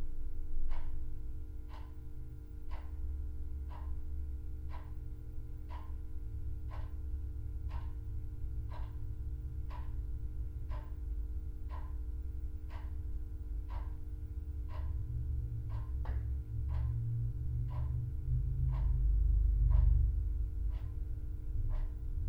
Huntley House, Reading, UK - Berkshire Pilates Basement
A short 10 minute meditation in the basement studio of Berkshire Pilates. The fading sound of the meditation bell reveals traffic, notably the low rumble of engines and boom of car stereos. The electric heater buzzes and clicking as it warms-up and together with the clock adds a sense of constancy to the sound of the space. (Spaced pair of MKH 8020s + SD MixPre6)
17 January 2018